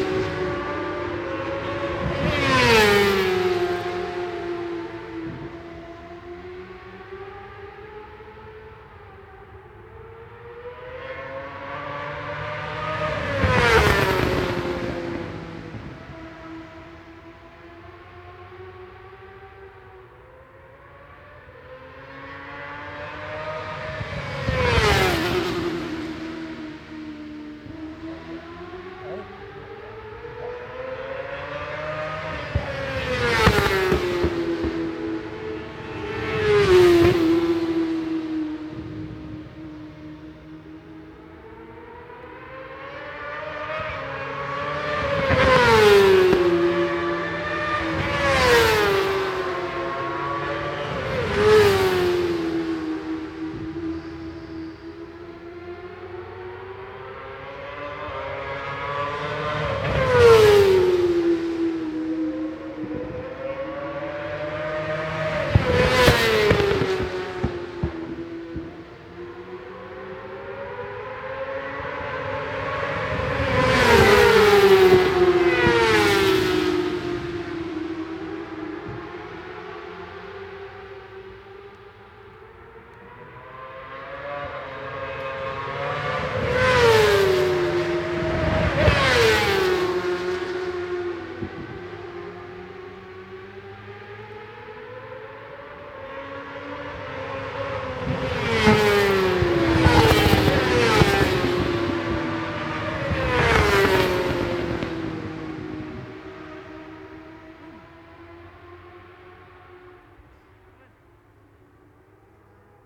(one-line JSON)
{"title": "Brands Hatch GP Circuit, West Kingsdown, Longfield, UK - world superbikes 2004 ... supersport practice ...", "date": "2004-07-31 10:00:00", "description": "world superbikes 2004 ... supersport 600 practice ... one point stereo mic to minidisk ... time approx ...", "latitude": "51.35", "longitude": "0.26", "altitude": "151", "timezone": "Europe/London"}